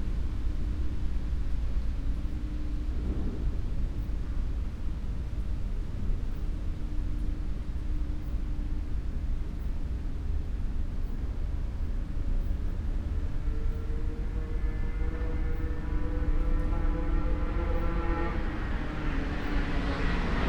Via Franca, Trieste, Italy - at night
9 September 2013